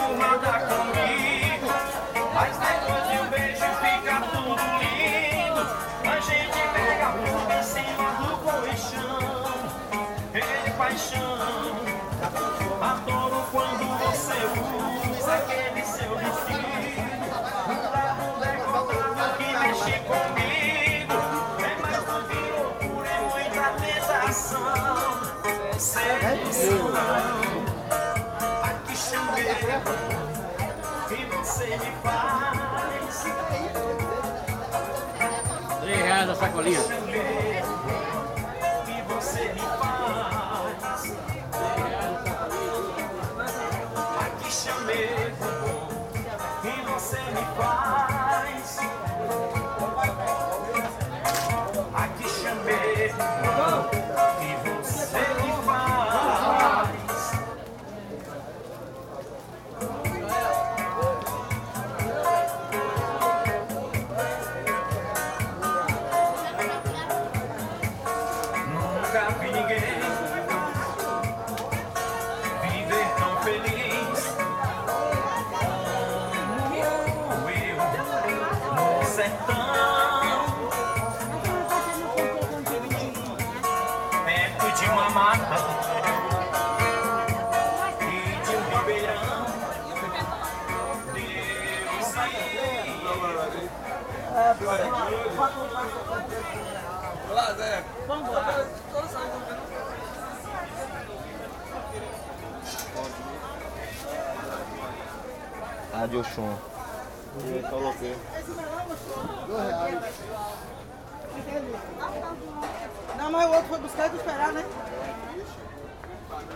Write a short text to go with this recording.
Feira, Vendedor de cd's toca arrocha no seu carrinho de som. Market place, CD salesman plays arrocha in your sound car.